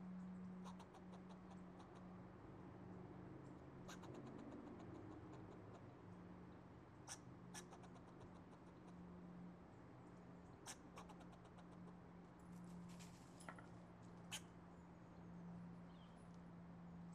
Mountain blvd. Oakland - squirrel
squirrel and his "stress call" and the dogs and Barney - The Rooster /// plus some airplanes always passing above our heads
19 March, 02:38